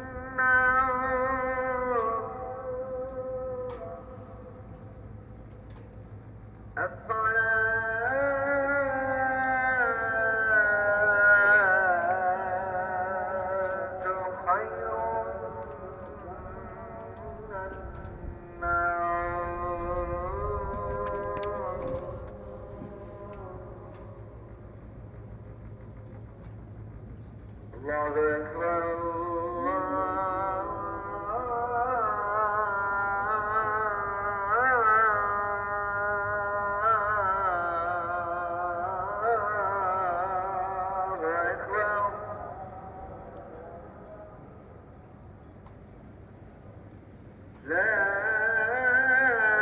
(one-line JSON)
{"title": "Marina Kalkan, Turkey - 915c Muezzin call to prayer (early morning)", "date": "2022-09-22 05:45:00", "description": "Recording of an early morning call to prayer\nAB stereo recording (17cm) made with Sennheiser MKH 8020 on Sound Devices MixPre-6 II.", "latitude": "36.26", "longitude": "29.41", "altitude": "6", "timezone": "Europe/Istanbul"}